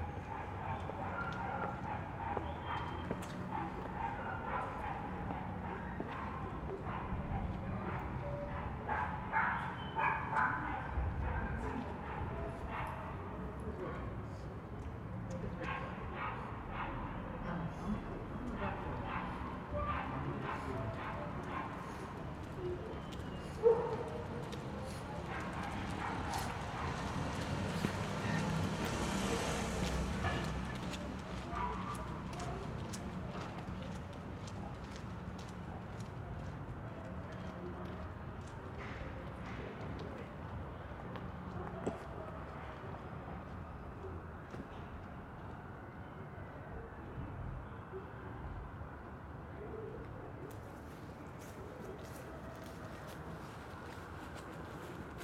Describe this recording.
You will hear: dogs, people walking, people talking, various types of vehicles, car, motorcycles, light wind, dog, ambulance siren, reverb of park.